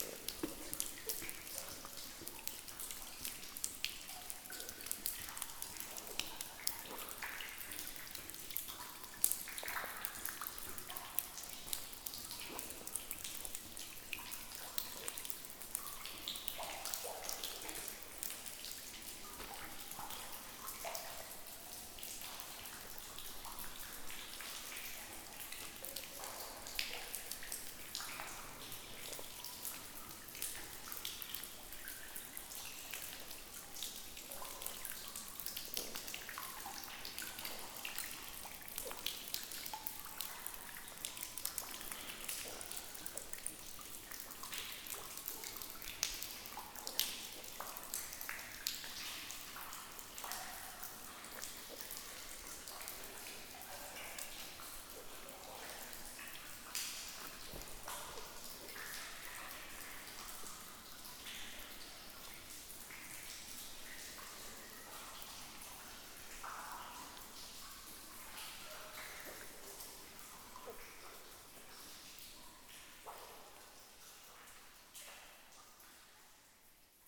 Rumelange, Luxembourg - Hutberg mine fountains
A walk inside the fountains of the Hutberg underground abandoned mine.